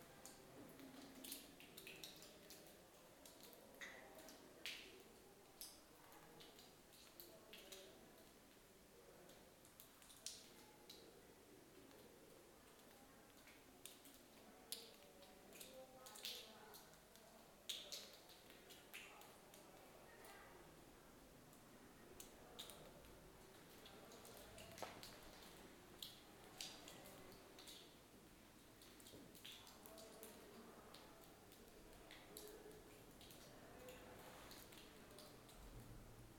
Recording down in the Roman caves of Hercules.
(Soundman OKM I Solo, Zoom H5)
Tanger-Tétouan-Al Hoceima ⵟⴰⵏⵊ-ⵟⵉⵜⴰⵡⵉⵏ-ⵍⵃⵓⵙⵉⵎⴰ طنجة-تطوان-الحسيمة, Maro, 1 February, 12:30